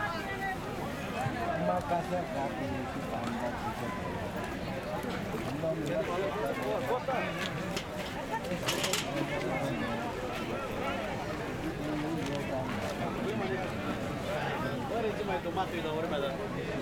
Xanthi, Greece - Crowded Bazaar Ambience
crowded bazaar ambience recorded in Xanthi, Greece on a Saturday morning. The bazaar takes place in the centre of the city each Saturday and it is renowned for its oriental character and the diversity of merchandise on display filled with colours, sounds and life.